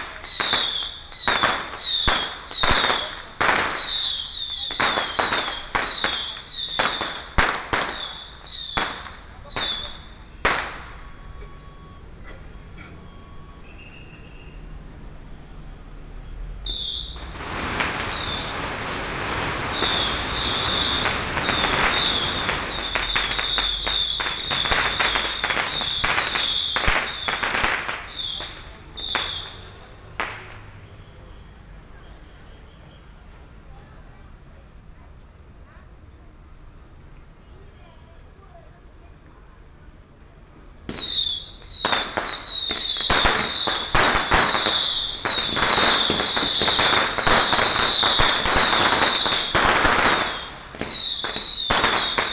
Zhongshan Rd., Shalu Dist. - Matsu Pilgrimage Procession
Firecrackers and fireworks, Traffic sound, Baishatun Matsu Pilgrimage Procession
Shalu District, Taichung City, Taiwan